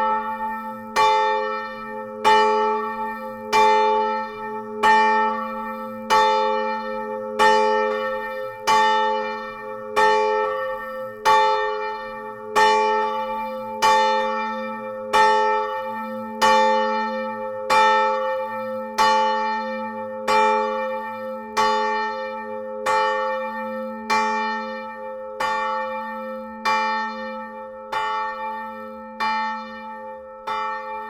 Angelus at 12 and the small bell ringing just after.
Chastre, Belgium, 13 March